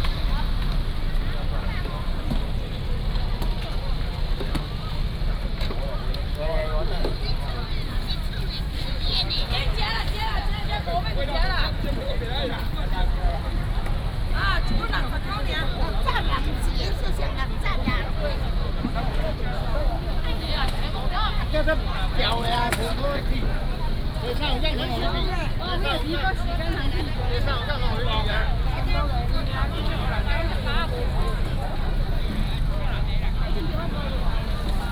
澎湖魚市場, Magong City - Walking in the fish market
Walking in the fish market
Magong City, Penghu County, Taiwan, 22 October 2014